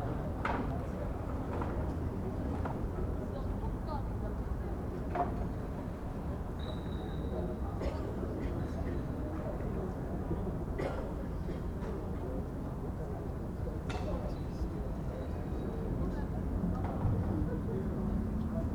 {"title": "berlin, john-foster-dulles-allee: haus der kulturen der welt, restaurantterrasse - the city, the country & me: terrace of the restaurant at house of the cultures of the world", "date": "2011-08-05 23:49:00", "description": "terrace of the restaurant at house of the cultures of the world short before closing time\nthe city, the country & me: august 5, 2011", "latitude": "52.52", "longitude": "13.36", "altitude": "32", "timezone": "Europe/Berlin"}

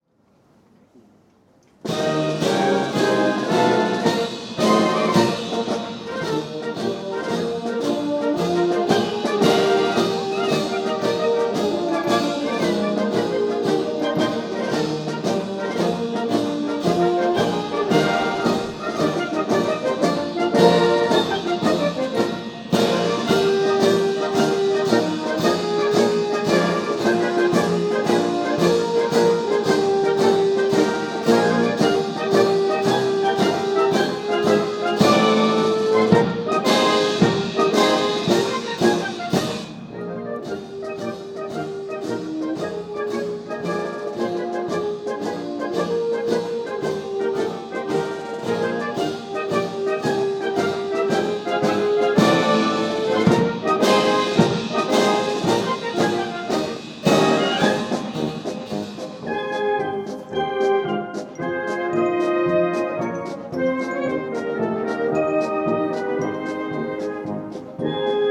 {"title": "Amalienborg Royal marches, København Denmark - Changing of the Amalienborg Royal guards", "date": "2013-09-26 12:10:00", "description": "A marching band accompanies the changing of the Royal guard at Amalienborg palace in Copenhagen. Tascam DR-100 with built in uni mics.", "latitude": "55.68", "longitude": "12.59", "altitude": "8", "timezone": "Europe/Copenhagen"}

